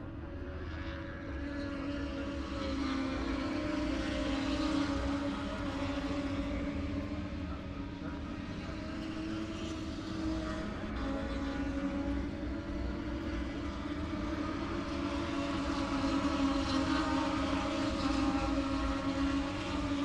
Silverstone Circuit, Towcester, UK - british motorcycle grand prix 2019 ... moto two ... fp3 ...
britsish motorcycle grand prix 2019 ... moto two ... free practice three ... maggotts ... lavalier mics clipped to bag ...
24 August, ~11:00